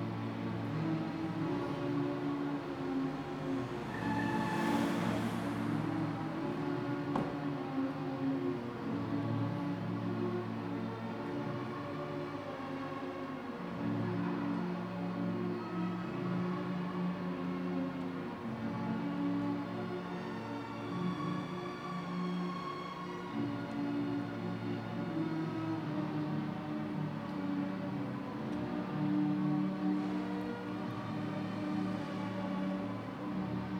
At the main entrance of the house of music.
Vienna, Austria, October 2011